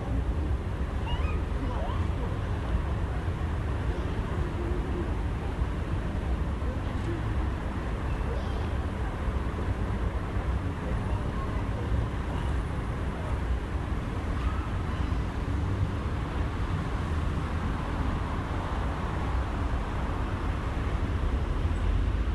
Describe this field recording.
Ambiance in front of the library.